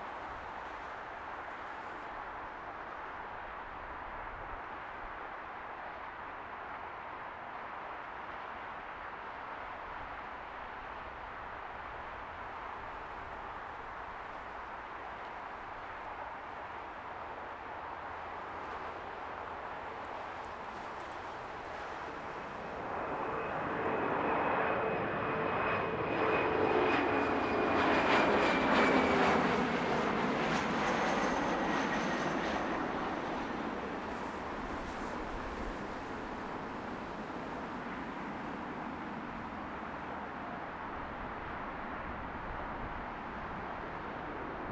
Bossen Park - MSP 12L Arrivals
Arriving aircraft landing on runway 12L at Minneapolis/St Paul International Airport recorded from the parking lot at Bossen Park
Hennepin County, Minnesota, United States